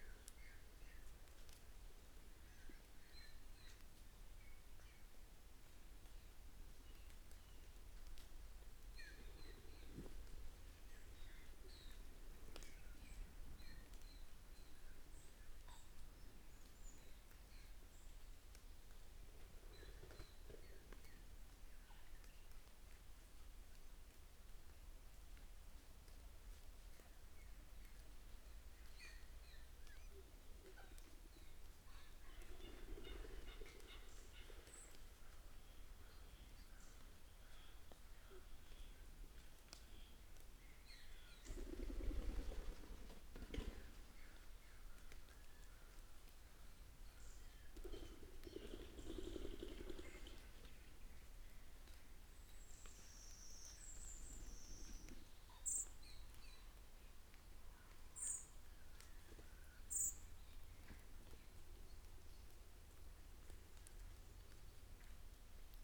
Green Ln, Malton, UK - pheasants leaving roost ...
pheasants leaving roost ... dpa 4060s in parabolic to MixPre3 ... bird calls from ... wren ... blackbird ... treecreeper ... crow ... redwing ... fieldfare ... robin ... red-legged partridge ...